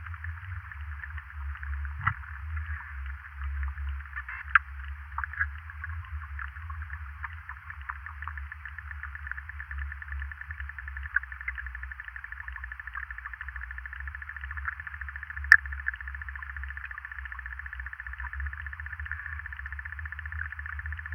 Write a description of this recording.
hydrophone. some low drone - probably from the wooden bridge vibrating in the wind...